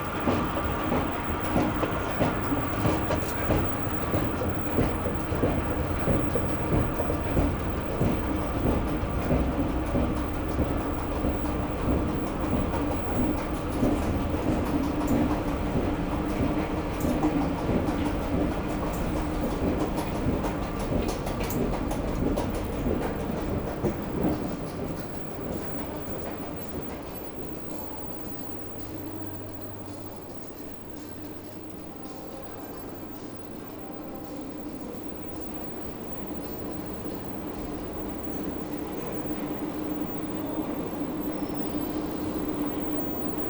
Charleroi, Belgique - Charleroi Waterloo metro station
Recording of the worrisome Waterloo tramway station in Charleroi. There's nearly nobody excerpt some beggars sleeping. Tramways make harsh sounds because the tracks are curve.
Charleroi, Belgium, 15 December 2018